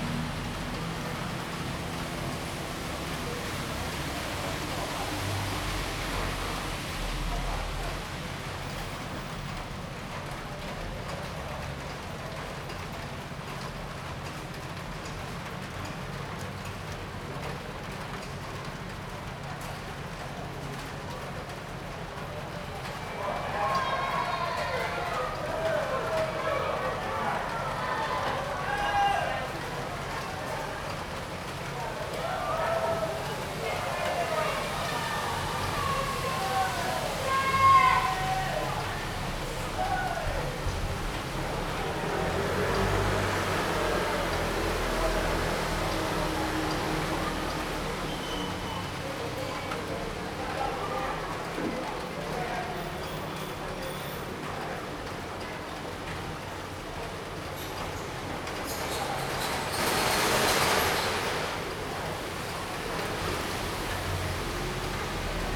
大仁街, Tamsui District - Before and after the power is restored
Before and after the power is restored, Traffic Sound, Cheers sound
Zoom H2n MS+XY +Spatial audio
New Taipei City, Taiwan, 10 August 2016, ~21:00